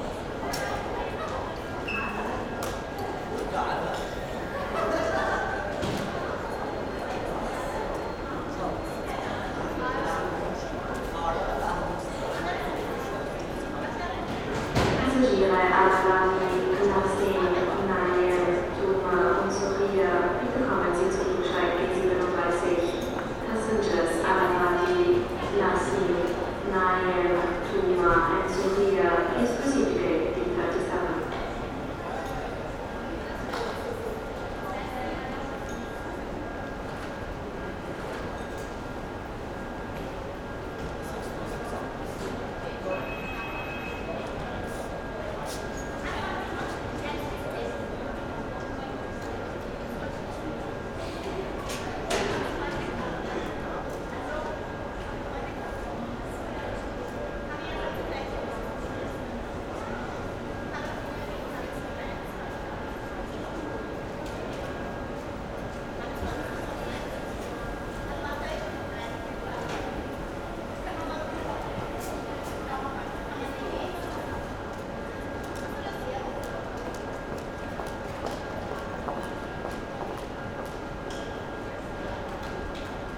Vienna airport - terminal A
ideling at vienna airport, terminal A, waiting for departure, check-in hall ambience.